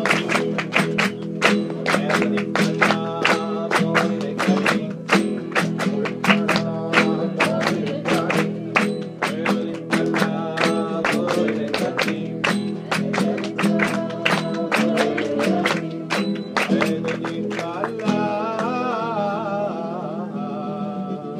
Obelių seniūnija, Lithuania - Jewish song